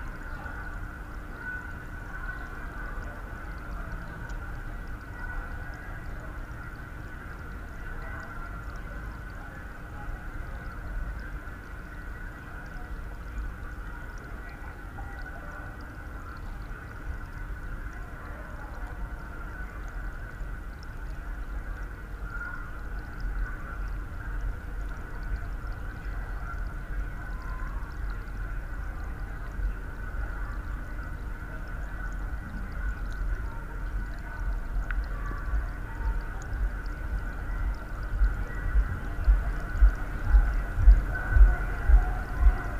Stolbergallee, Paderborn, Deutschland - Unter Wasser am Rothebach
where
you are not supposed
to go
but unter
the most beautiful play of
water and light
a bridge
between
pleasure and pleasure
even the dogs
won't notice you